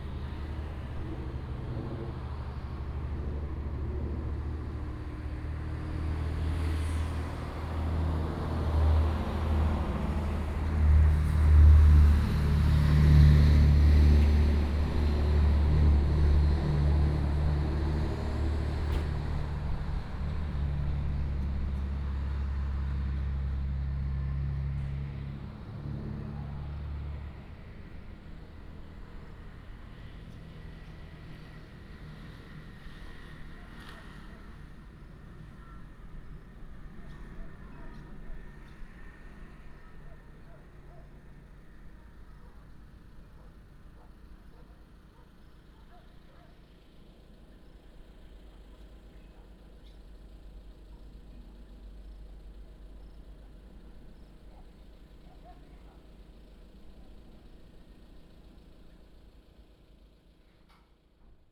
牡丹社事件紀念公園, Pingtung County - In the parking lot
In the parking lot, traffic sound, Bird cry, Dog barking, Plane flying through